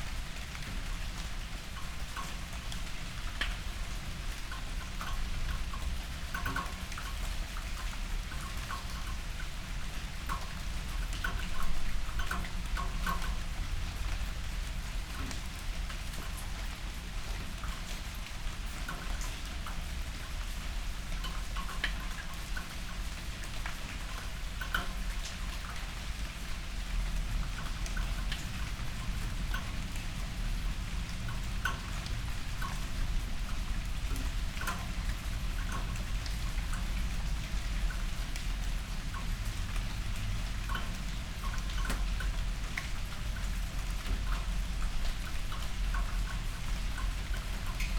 {"title": "Berlin Bürknerstr., backyard window - November rain", "date": "2016-11-05 19:15:00", "description": "Saturday evening, after a grey November day, it has started to rain. Sound of raindrops on fallen leaves.\n(Sony PCM D50, Primo EM172)", "latitude": "52.49", "longitude": "13.42", "altitude": "45", "timezone": "Europe/Berlin"}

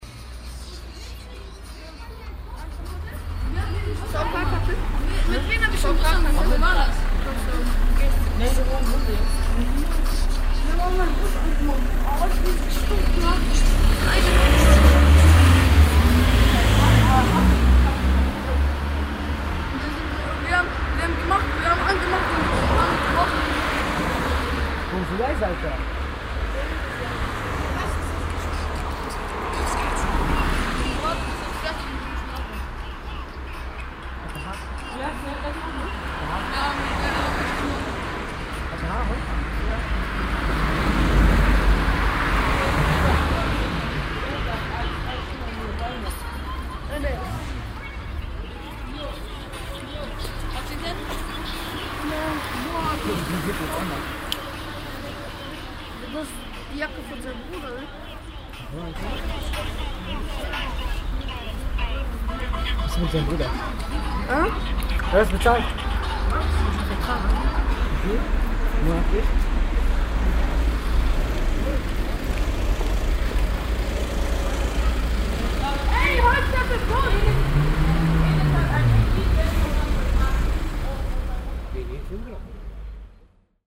{"title": "heiligenhaus, jugendliche auf straße", "date": "2008-04-21 17:57:00", "description": "konversation und handygeräusche jugendlicher an einer roten ampel\nproject: : resonanzen - neanderland - social ambiences/ listen to the people - in & outdoor nearfield recordings", "latitude": "51.33", "longitude": "6.97", "altitude": "182", "timezone": "Europe/Berlin"}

